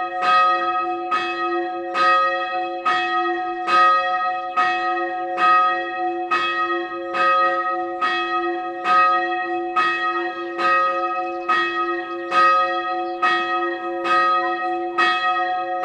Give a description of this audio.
Dzwony kościelne na terenie Muzeum Kaszubskiego Parku Etnograficznego. Dźwięki nagrano podczas projektu "Dźwiękohisotrie. Badania nad pamięcią dźwiękową Kaszubów.